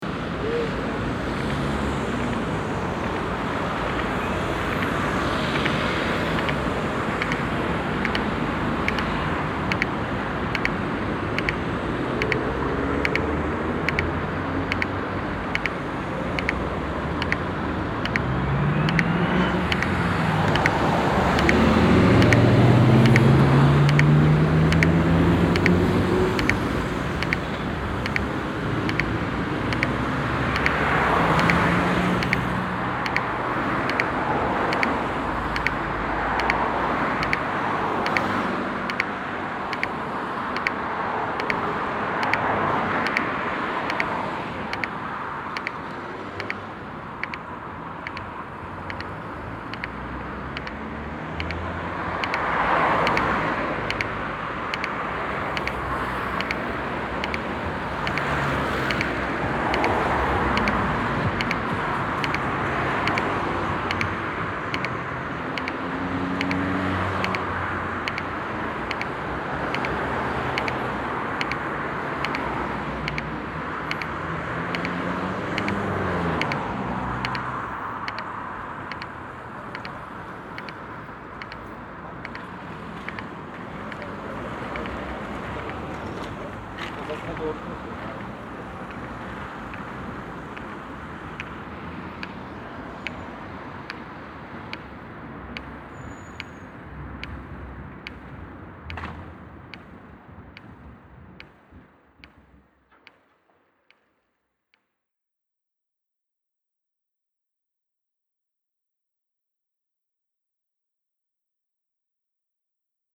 Stadtkern, Essen, Deutschland - essen, friedrich ebert str, traffic signs
An einer Ampelanlage mit akustischem Signal für sehbehinderte Menschen. Der Klang der klickenden Pulssignale in der stark befahrenen Verkehrssituation.
At a traffic sign with acoustic signals for blind people. The sound of the clicking puls signal inside the dense traffic situation.
Projekt - Stadtklang//: Hörorte - topographic field recordings and social ambiences